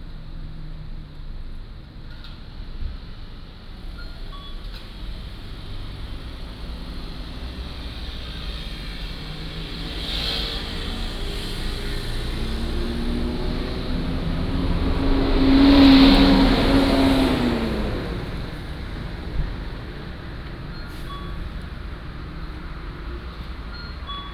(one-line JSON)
{"title": "Zhongzheng S. Rd., Rueisuei Township - In the side of the road", "date": "2014-10-09 14:49:00", "description": "Traffic Sound, In the side of the road", "latitude": "23.50", "longitude": "121.38", "altitude": "103", "timezone": "Asia/Taipei"}